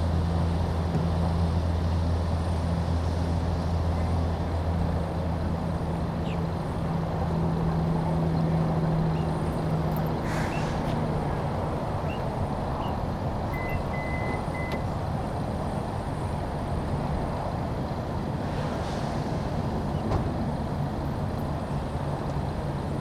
Port Wentworth, GA, USA - Georgia Welcome Center
The parking lot of a Georgia welcome center/rest stop. Cars, trucks, birds, and people can all be heard.
[Tascam Dr-100mkiii, on-board uni mics]